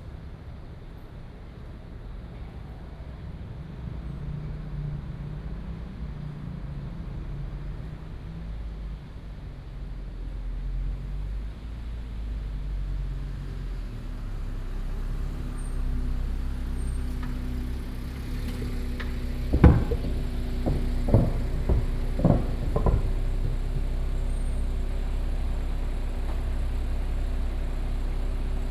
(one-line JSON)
{"title": "Ln., Lishui St., Da’an Dist., Taipei City - Temporary park", "date": "2015-07-21 09:23:00", "description": "Bird calls, traffic sound, Temporary park, The building has been removed, Buyer is temporary park, The future will cover building", "latitude": "25.03", "longitude": "121.53", "altitude": "15", "timezone": "Asia/Taipei"}